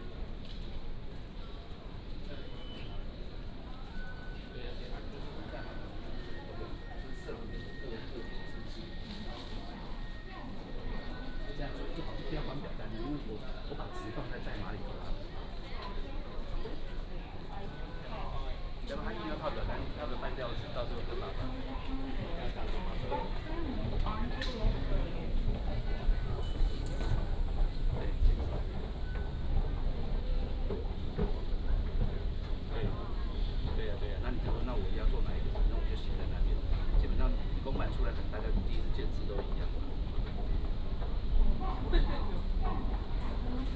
Daan Station, Taipei City - Walking out of the station
From the station platform, Went export